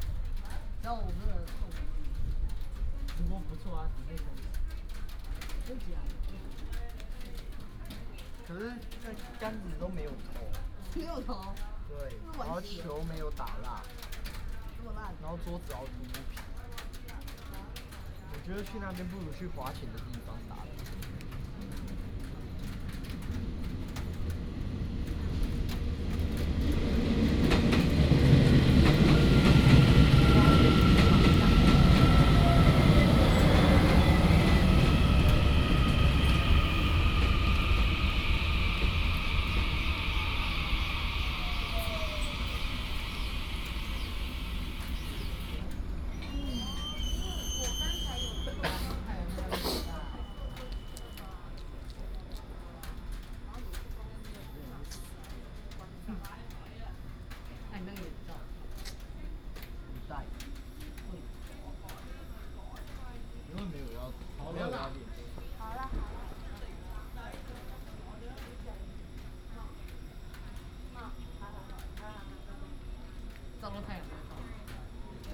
Yangmei Station, Taoyuan City - Train arrived
At the station platform, Train arrived